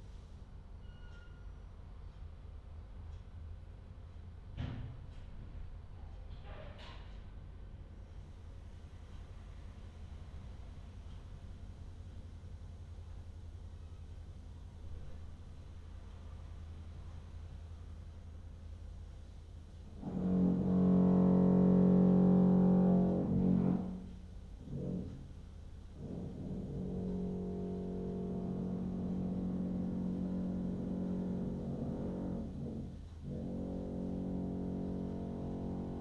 Rijeka, Croatia, Sunday Work - Sunday Work
AKG C414 Blumlein
23 March 2013, ~13:00